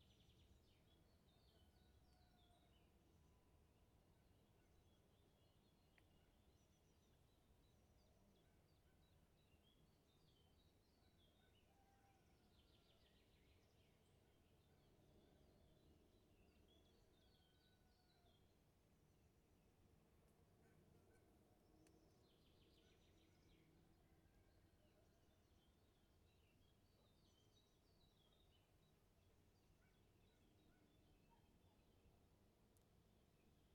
вулиця Зарічна, Вінниця, Вінницька область, Україна - Alley12,7sound1nature
Ukraine / Vinnytsia / project Alley 12,7 / sound #1 / nature
27 June 2020